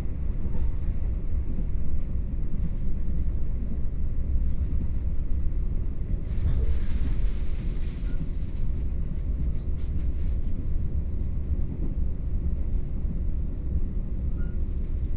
Tze-Chiang Limited Express, to Tainan station
North District, Tainan City, Taiwan, 3 September, 21:13